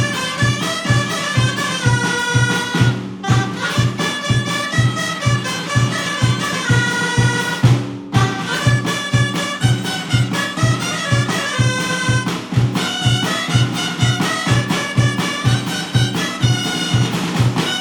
Ensayo semanal de los Grallers de Sant Bartomeu.
St Bartomeu del Grau, Spain, 21 July